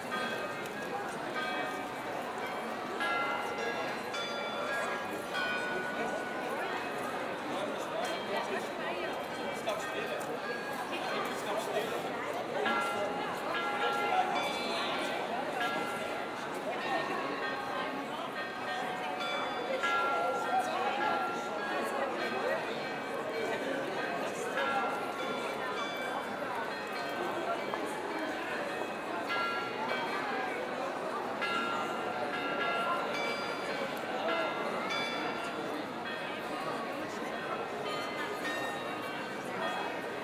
{
  "title": "Aarau, Maienzug, Bells, Schweiz - Maienzug Glockenspiel",
  "date": "2016-07-01 08:10:00",
  "description": "While the people are chatting, waiting for the Maienzug, the bells of a former tower of the city play some tunes.",
  "latitude": "47.39",
  "longitude": "8.04",
  "altitude": "389",
  "timezone": "Europe/Zurich"
}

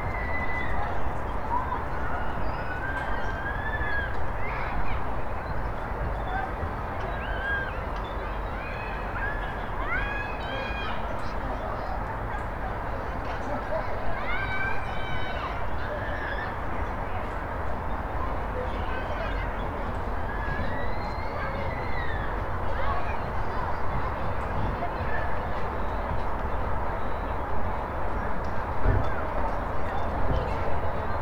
{
  "title": "Roswell Rd, Marietta, GA, USA - East Cobb Park: Gazebo",
  "date": "2020-02-22 15:13:00",
  "description": "The East Cobb Park recorded from the wooden gazebo by the parking lot. Children playing, people walking around the park, traffic sounds, etc. Recorded with the Tascam dr-100mkiii and a dead cat windscreen.",
  "latitude": "33.98",
  "longitude": "-84.45",
  "altitude": "292",
  "timezone": "America/New_York"
}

